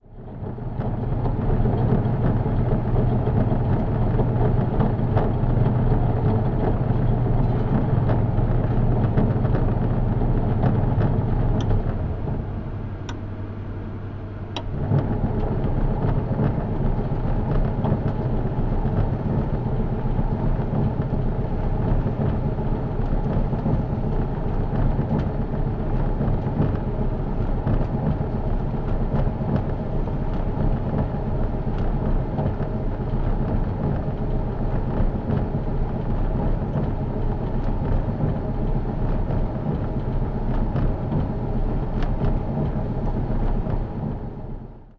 berlin, ohlauer str., waschsalon - tumble dryer
tumble dryer tumbling and drying. contact mic recording
Berlin, Germany